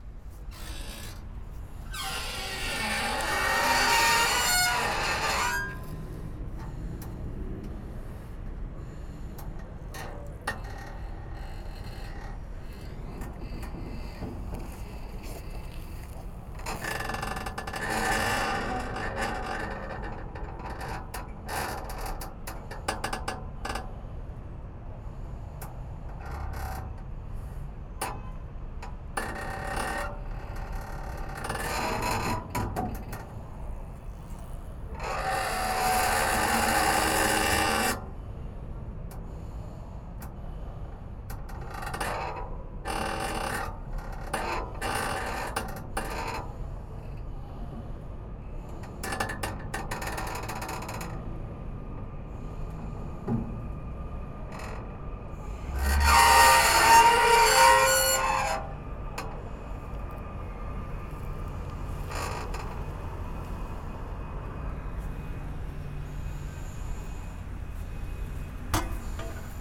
Squeaking of two bridges of a restaurant-boat. Somebody was talking to me : What are you doing ? I said : I'm recording this sound. After this, he said to me : aaah ok, it's for an horror film !
Paris, France, 23 September